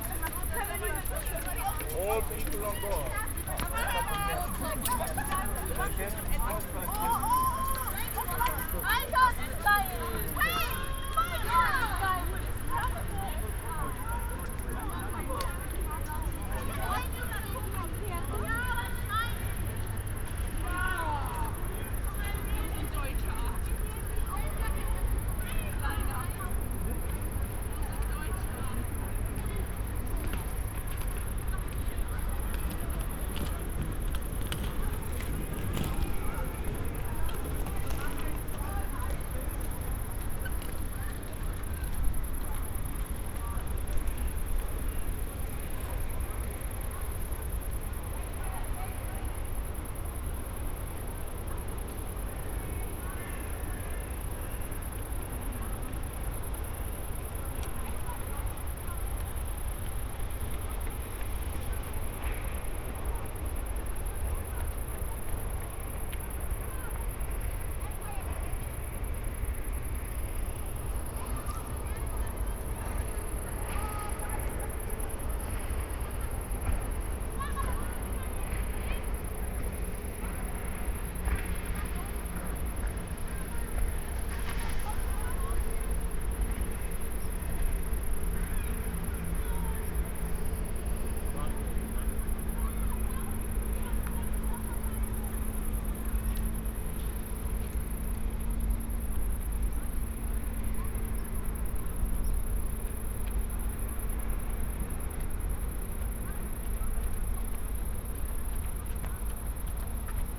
Riehl, Köln, Deutschland - Rhein meadows, riverside path
Köln, path alongside river Rhein, ambience, crickets, drone of ships, a group of youngsters from the nearby youth hostel
(Sony PCM D50, Primo EM172)